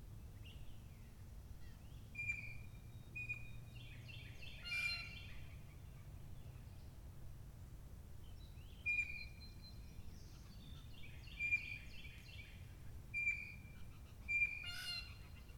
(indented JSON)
{
  "title": "Paved Bike Trail, Ouabache State Park, Bluffton, IN, USA - Morning bird song, Paved Bike Trail, Ouabache State Park, Bluffton, IN",
  "date": "2019-07-20 08:35:00",
  "description": "Morning bird song heard on the Paved Bike Trail. Recorded at an Arts in the Parks Soundscape workshop at Ouabache State Park, Bluffton, IN. Sponsored by the Indiana Arts Commission and the Indiana Department of Natural Resources.",
  "latitude": "40.73",
  "longitude": "-85.13",
  "altitude": "254",
  "timezone": "America/Indiana/Indianapolis"
}